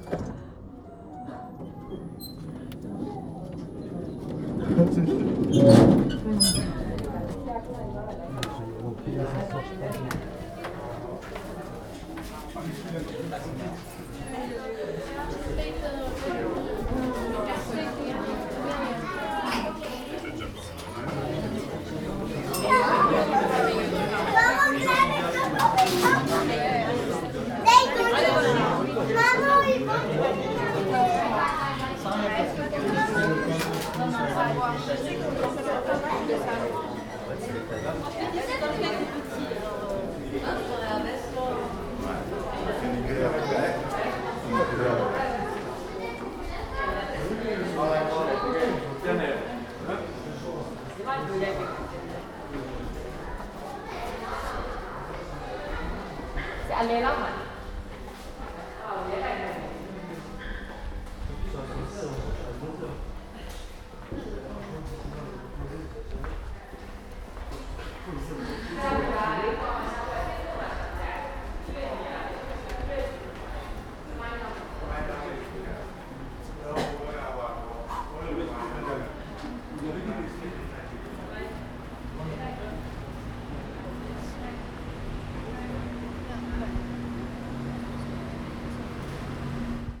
Parc du Chateau, Nice, France - Descending in the lift from the park to the street
The Parc du Chateau is on a hill to the east of Nice's beaches. To get up there you can either trek up the stairs or road, or you can take the lift. This recording was made (with a ZOOM H1) on the lift journey down from the hill top to street level. When you exit the lift you walk down a tiled passage and this was filled with a long queue of people waiting to use the lift.
2 November 2014, ~13:00